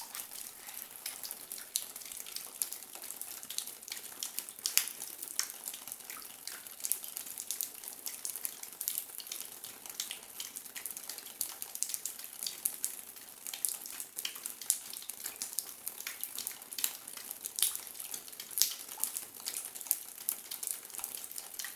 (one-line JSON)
{"title": "Saint-Martin-le-Vinoux, France - Mine drops", "date": "2017-03-27 15:00:00", "description": "Into an underground cement mine, water is falling on rocks. It makes calcite concretions.", "latitude": "45.22", "longitude": "5.73", "altitude": "809", "timezone": "Europe/Paris"}